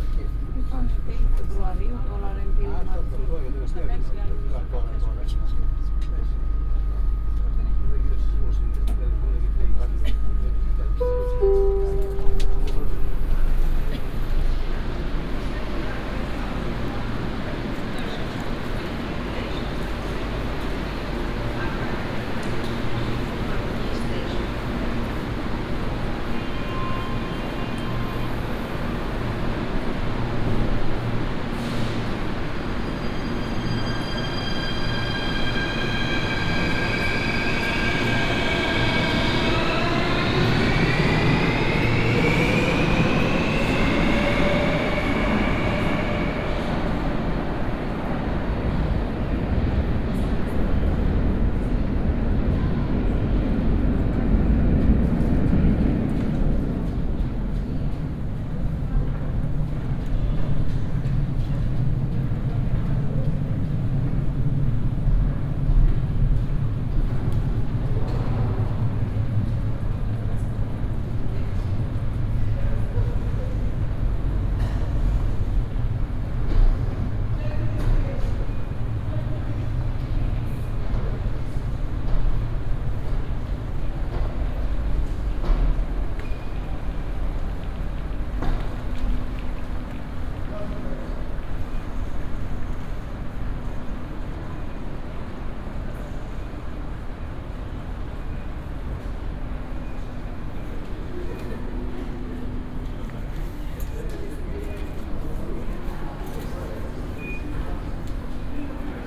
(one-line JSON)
{"title": "Acropoli-Syngrou, Athens, Greece - (533) Metro ride from Acropoli to Syngrou", "date": "2019-03-09 16:16:00", "description": "Binaural recording of a metro ride with line M2 from Acropoli to Syngrou.\nRecorded with Soundman OKM + Sony D100.", "latitude": "37.97", "longitude": "23.73", "altitude": "84", "timezone": "Europe/Athens"}